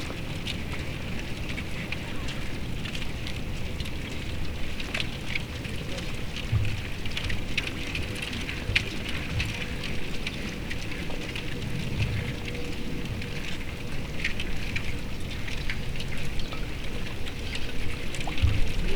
colliding ice sheets at the riverside of the havel river
the city, the country & me: march 24, 2013
Deutschland, European Union